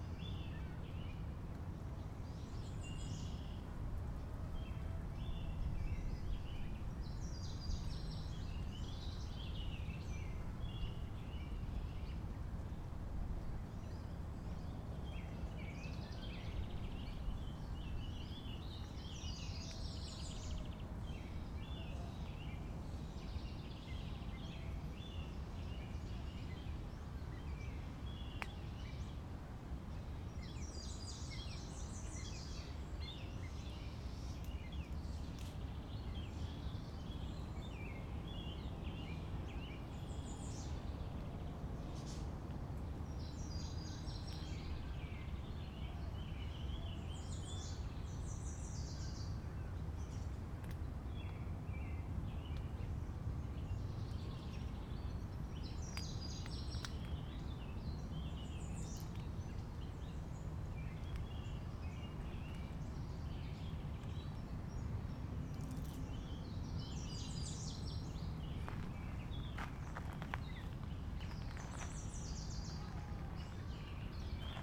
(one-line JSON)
{"title": "Washington Park, South Doctor Martin Luther King Junior Drive, Chicago, IL, USA - Summer Walk 5", "date": "2011-06-18 15:15:00", "description": "Recorded with Zoom H2. An Interactive walk through Washington Pk.", "latitude": "41.79", "longitude": "-87.61", "altitude": "178", "timezone": "America/Chicago"}